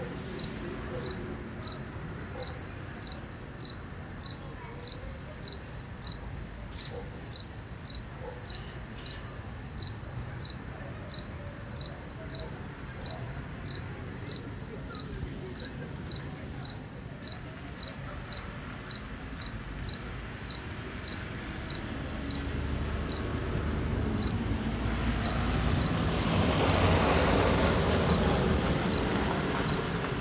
This take was made from inside the house, through the window. Crickets, voices, cars and a violin heard from about 40 meters.
Rocha, Uruguay, 16 January